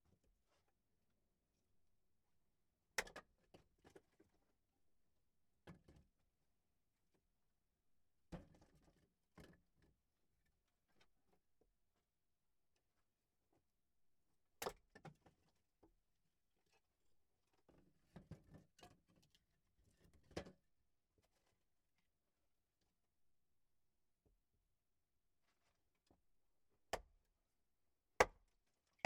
Sněžné, Vysočina, Czech Republic - chopping wood in the shed
The recording is from the house, where originally lived tailor and adventurer Adolf Zelený (since start of 20th century). Now, the house belong the family Pfann, because this family - especially Květoslava Pfannová and his husband Jaroslav - helped this man in the last decades and in the end of his life (in 1988), they care of him. Family Pfann lived in the house next door, and the head of family, Jaroslav, was a evangelic pastor in this village.
Because Adolf Zelený, this peculiar, strange, but really good man, had no more children, wife or siblings, he hand over his small house to the Pfann family.
Květoslava Pfannová was last of four children of the Antonín and Anna Balabán. Antonín was born in village Křídla, 15 km from village Sněžné. But because hwe was a evangelic pastor too, he was moving a lot of times in his life, and Květoslava was born in village Boratín (today on Ukraine), where this family lived 15 years, before the second world war.
Jihovýchod, Česká republika, 10 February 2020